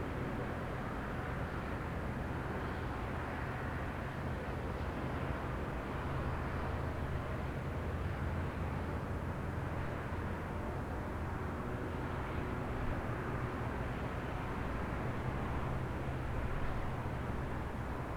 just distant traffic from an ancient mound